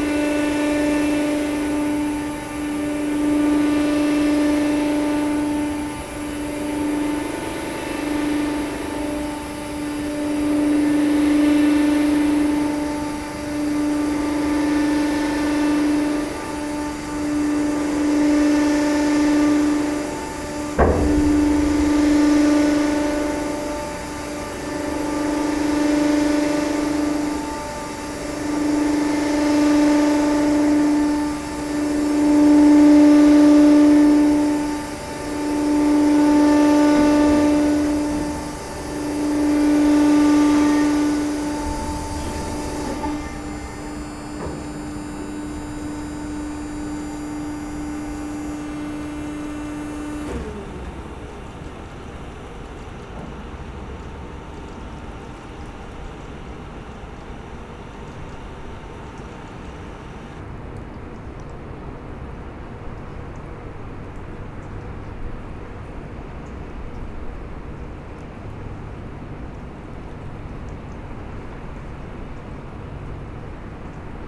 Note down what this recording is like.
A boat entering in the Varennes-Sur-Seine sluice. In first, the doors opening, after the boat, and at the end the doors closing. The boat is called Odysseus. Shipmasters are Françoise and Martial.